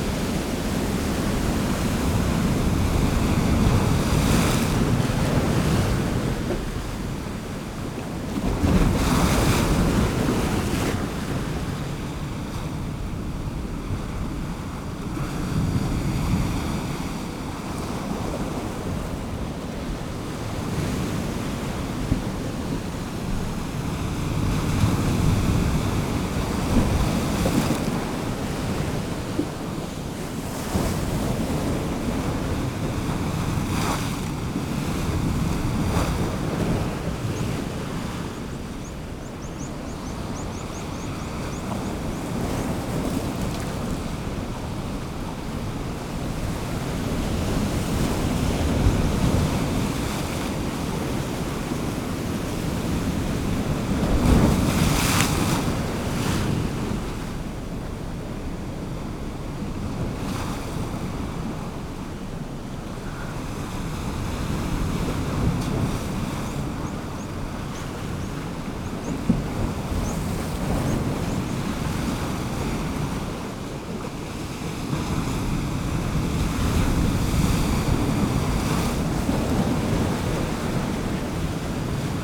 East Pier, Whitby, UK - Mid tide on the slip way ...
Mid tide on the slip way ... lavalier mics clipped to bag ... bird calls from rock pipit and herring gull ...